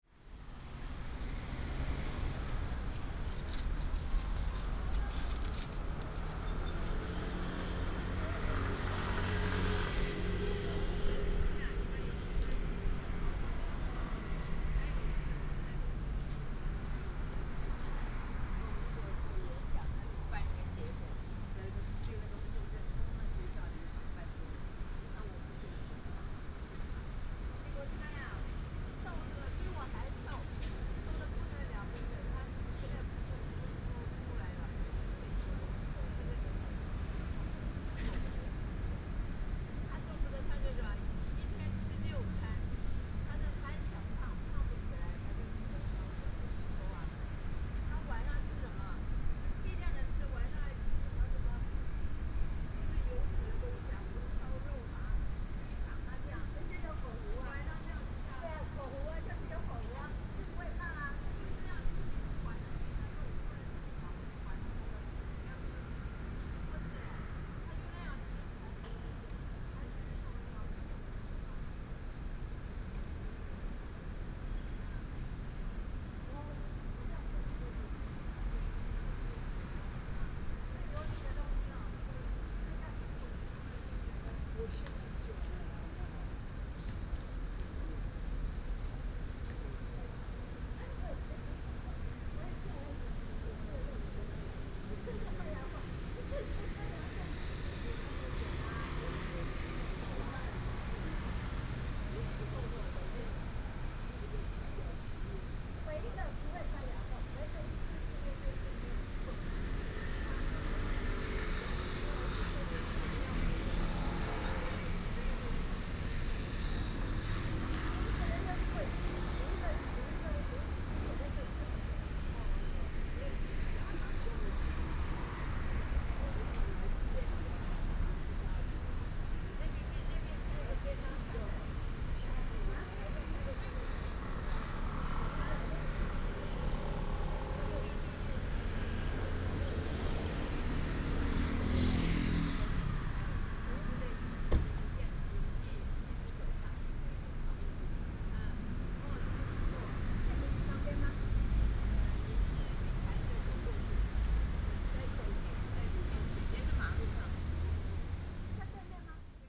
in the Large collection of residential areas, traffic sound, Several women are chatting, Binaural recordings, Sony PCM D100+ Soundman OKM II